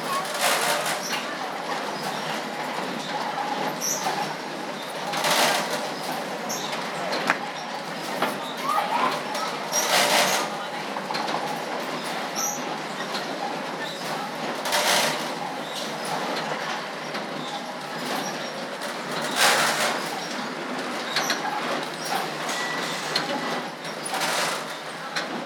Hastings Old Town, East Sussex, UK - Fun park Coastal Currents 2011
I walked around the fun park in Hastings' Stade area from the boating lake to the Lifeboat station. Sound gathering for a live audio collage piece at the end of the festival.